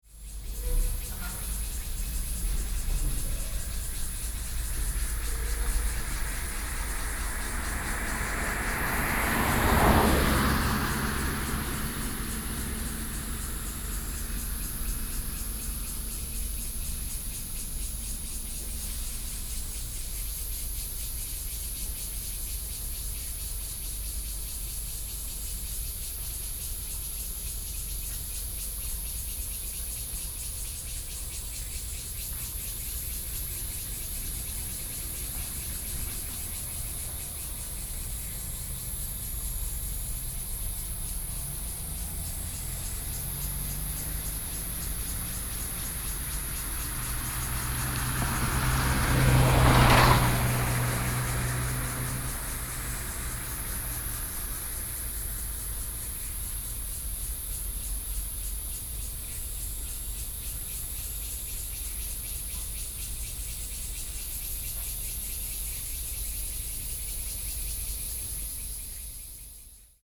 Baolin Rd., Linkou Dist., New Taipei City - Cicadas sound
Inside the temple, Bird calls, Cicadas cry, Traffic Sound
Sony PCM D50+soundmam okm